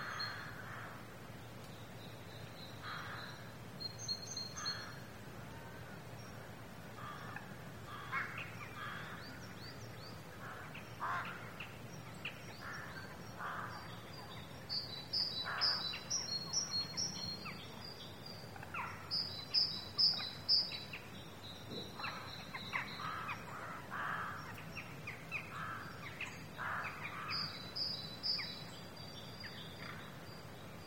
Tyneham, UK - Jackdaws, Rooks and Crows
Walking down to Warbarrow bay, with the sounds of the valley and the sea waves breaking in the background. Sony M10.
Wareham, UK, December 2016